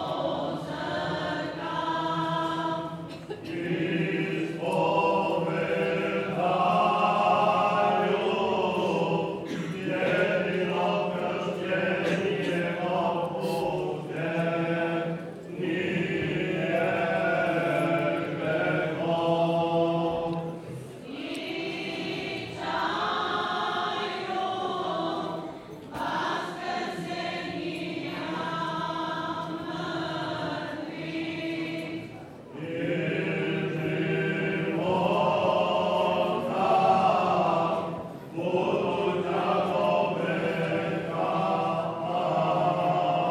a very old Slavic song sung by the local choir, recorded from the entrance to the church
Croatia, 14 August 1997